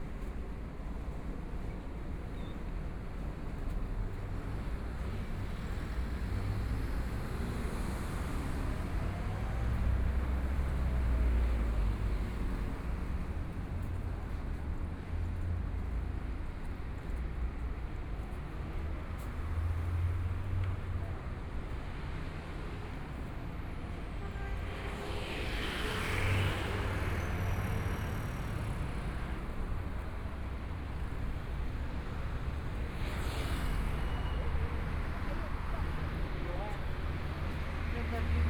Zhongshan Rd., Hualien City - on the road
walking on the Road, Sound various shops and restaurants, Traffic Sound
Please turn up the volume
Binaural recordings, Zoom H4n+ Soundman OKM II
Hualien County, Taiwan